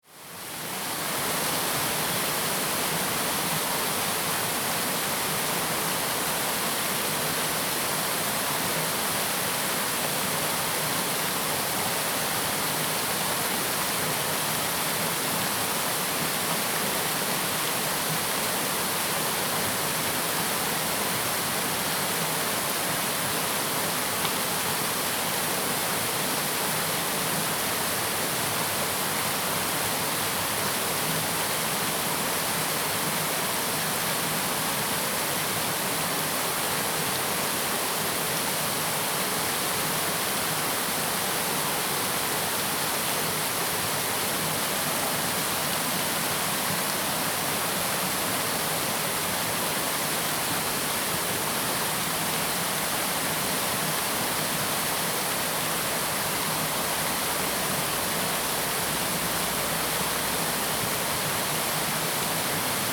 茅埔坑溪, 埔里鎮桃米里 - The sound of water streams
Insects sounds, The sound of water streams
Zoom H2n MS+XY
Nantou County, Puli Township, 桃米巷11-3號, 10 August 2015, ~21:00